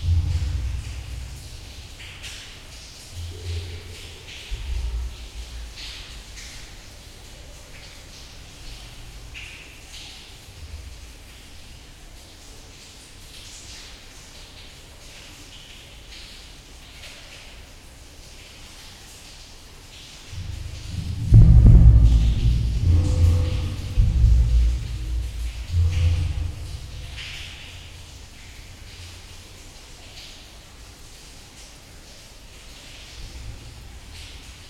Valenciennes, France - Sewers soundscape
Soundscape of the Valenciennes sewers, while visiting an underground river called Rhonelle.
24 December 2018